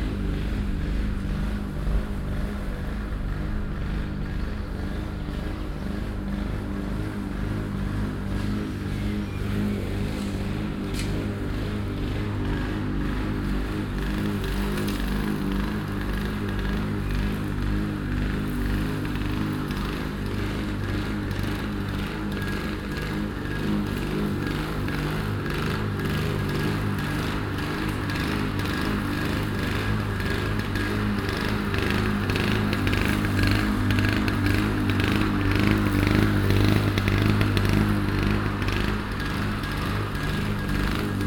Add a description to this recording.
städtische parkpflegearbeiten, vormittags, soundmap nrw: social ambiences/ listen to the people - in & outdoor nearfield recordings